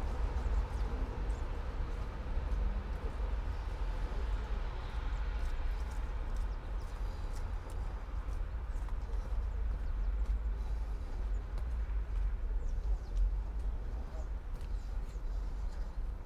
all the mornings of the ... - jan 25 2013 fri